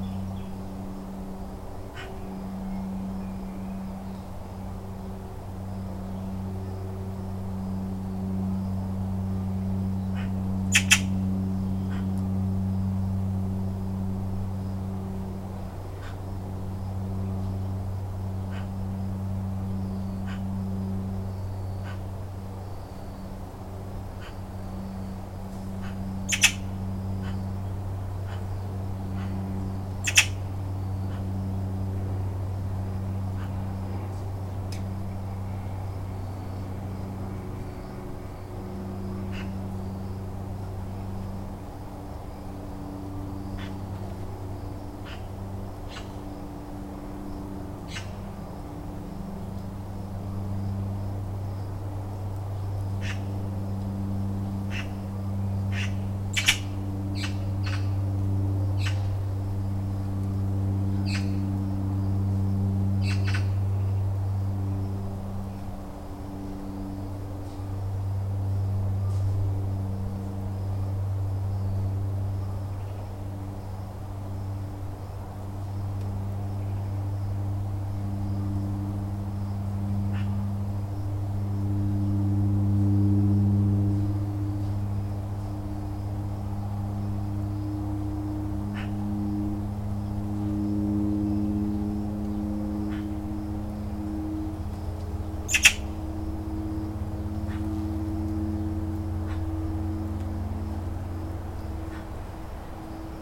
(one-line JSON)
{"title": "Gavassa, Ca Azzarri", "date": "2010-05-01 14:41:00", "description": "WDL, Gavassa, Tenuta Ruozzi, Reggio Emilia, Emilia Romagna, Italy, Aerodrome, plane, noise", "latitude": "44.70", "longitude": "10.71", "altitude": "43", "timezone": "Europe/Rome"}